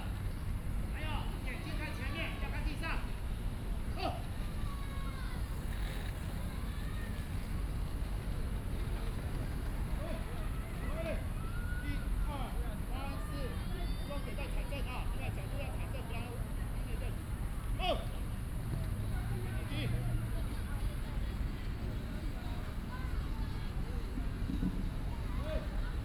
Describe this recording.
Skates field, Many children learn skates, Aircraft flying through